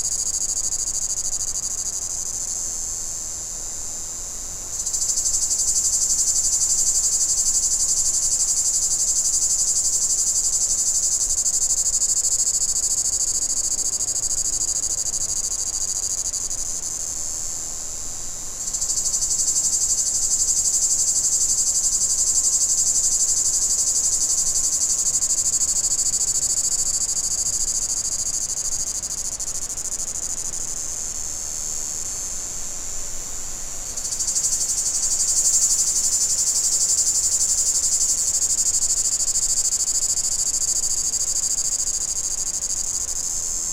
Le Parc du Confluent, Rte de Lacroix - Falgarde, 31120 Portet-sur-Garonne, France - Le Parc du Confluen
cicada, walker, wind in the trees
Captation : ZOOM H6